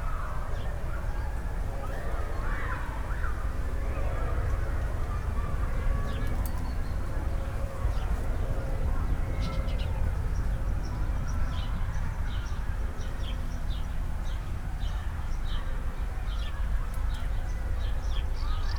the little sanctuary with its now dense vegetation was an access point for fuel tanks before, signs still remind that smoking is not allowed with a range of 15m. ambience with sounds from the nearby swimming bath.
(Sony PCM D50, DPA4060)